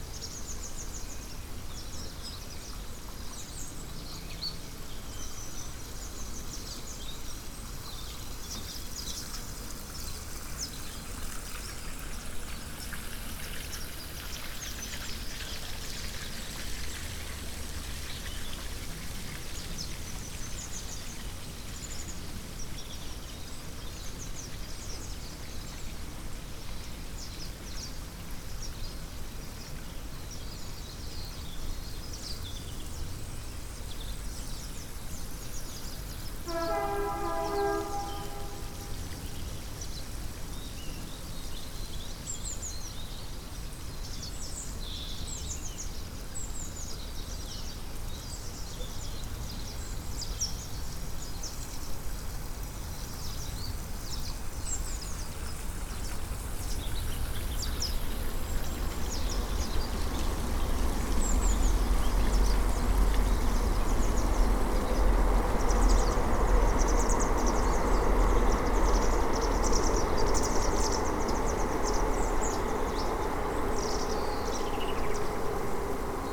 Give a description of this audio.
Recorded close to Battle Sewage Works with Tascam DR-05 and wind muff. Sounds: circular rotating settlement and filter tanks, 80-100 pied wagtails attracted by the insects and several hoots from passing trains.